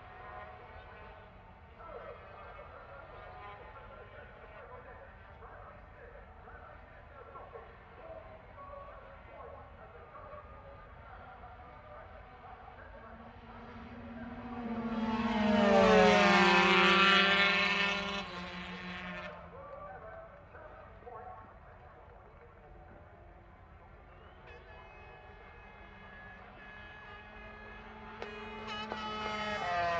Unnamed Road, Derby, UK - british motorcycle grand prix 2006 ... 125 race ...
british motorcycle grand prix 2006 ... 125 race ... one point stereo mic to mini disk ...